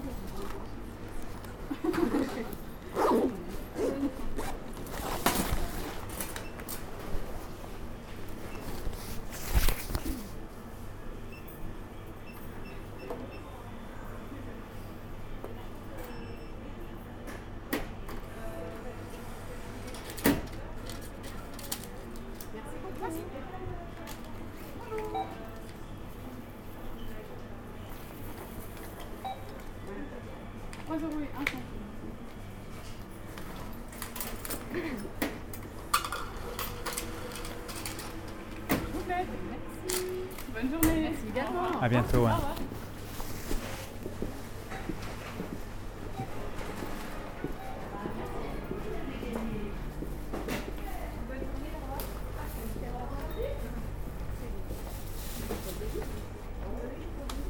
Court-St.-Étienne, Belgique - At the supermarket
At the local supermarket, called intermarché. Entering the supermarket, cutting a huge bread and three persons paying at the cashier.